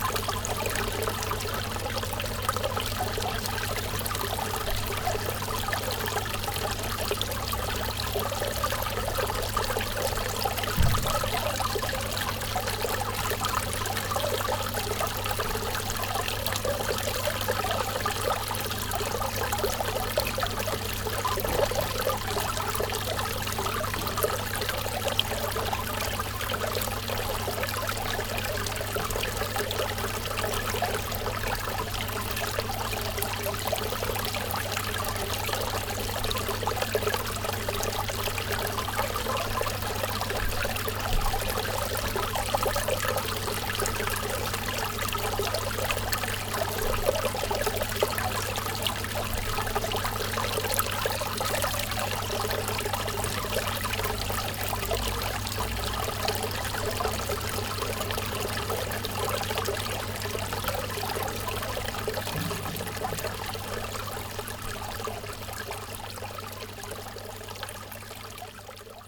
cologne, altstadt, an groß st. martin, brunnen
kleiner, alter brunnen im kirchhof, ruhiger moment im touristischen treiben derkölner altstadt
soundmap nrw: social ambiences, art places and topographic field recordings